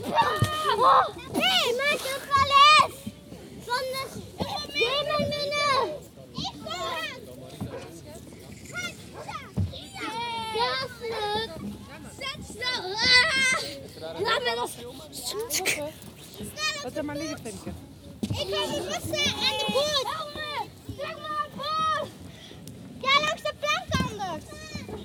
Cadzand, Nederlands - Children playing in a boat
During a very sunny sunday afternoon, children playing in a big pirates boat.